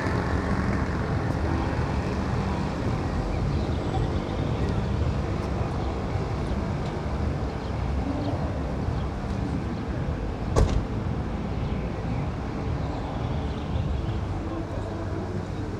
Ljudski vrt Stadium, Mladinska ulica, Maribor, Slovenia - aerobics class
the area around the stadium is very active with sports and physical ativities - from immediately outside the stadium an aerobics class could be heard taking place inside one of the buildings across the street.